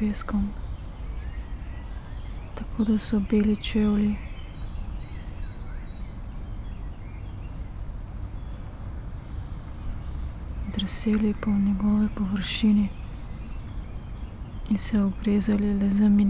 Mariborski otok, river Drava, tiny sand bay under old trees - few words, spoken out loud